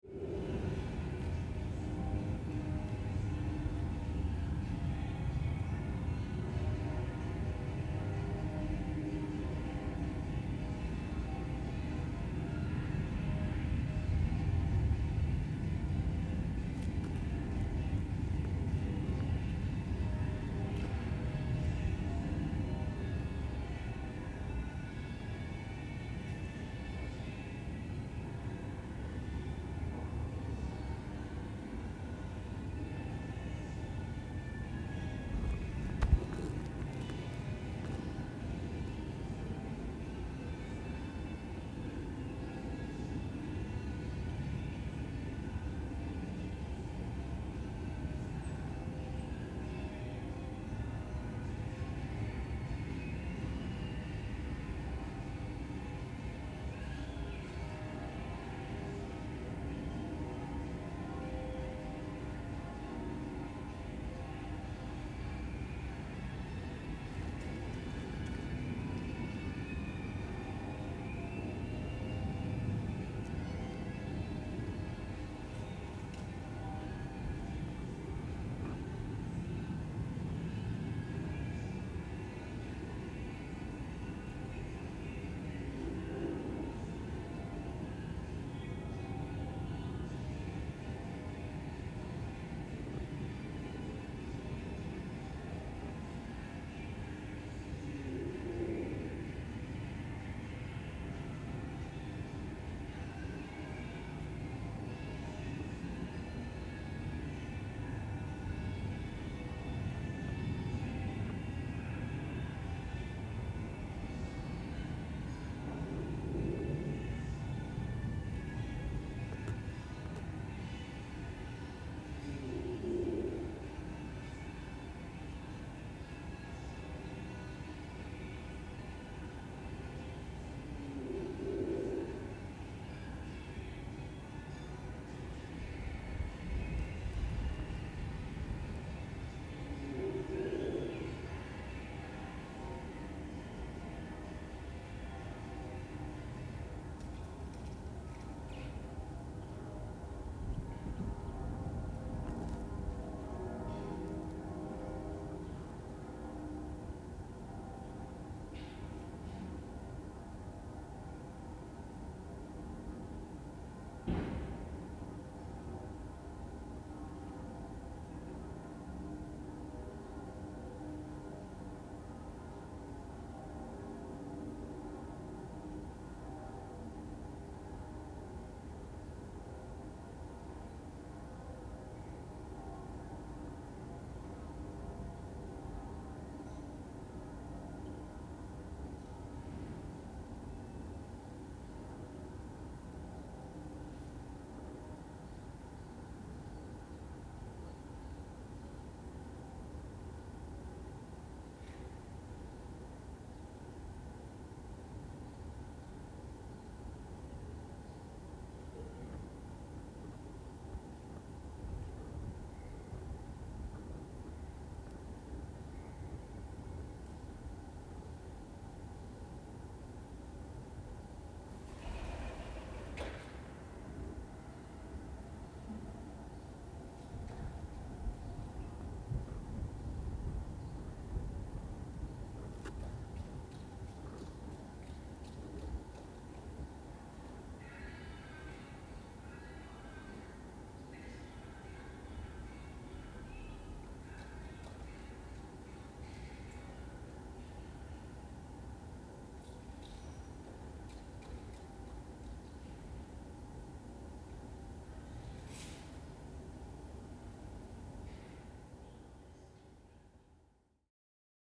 Hiddenseer Str., Berlin, Germany - The Hinterhof from my 3rd floor window. Sunday, 2 days after Covid-19 restrictions
The weather is beautiful. My inner Hinterhof always gives good protection from street noise but not from the planes above. But during the Covid-19 crisis flights are significantly reduced - there are still one or two - and traffic is also down. The background sound is appreciably quieter. On this occasion the Sunday church bells are ringing in the distance and someone's radio is playing through a window open to the good weather and fresher than normal air.